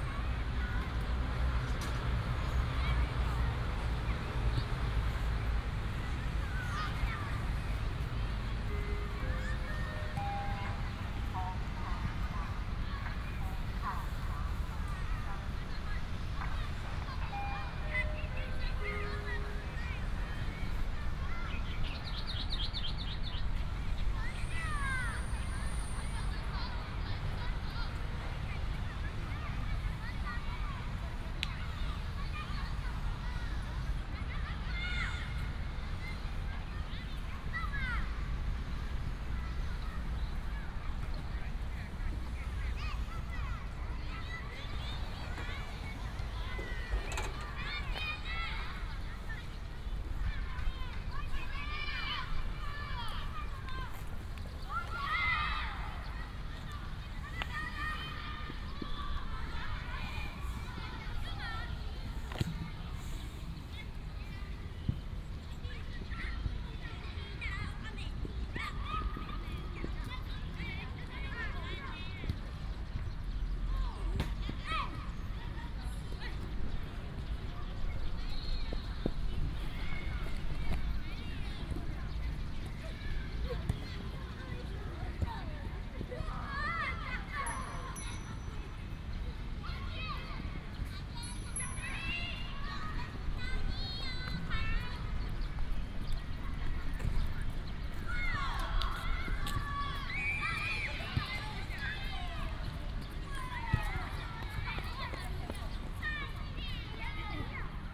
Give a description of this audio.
Sports ground in elementary school, Many children play football, Traffic sound, birds sound, Binaural recordings, Sony PCM D100+ Soundman OKM II